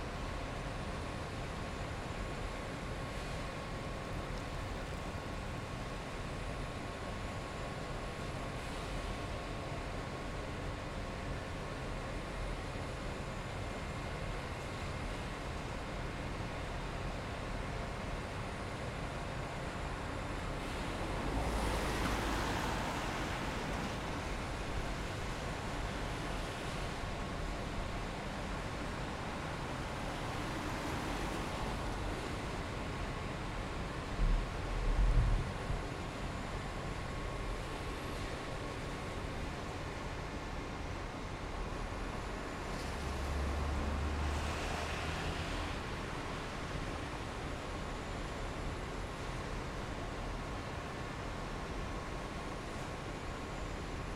Coenhavenweg, Amsterdam, Nederland - Wasted Sound Bunge
Wasted sounds is a project where I am looking for sounds that won't be heard or that are considered as noise.
Noord-Holland, Nederland, 7 November 2019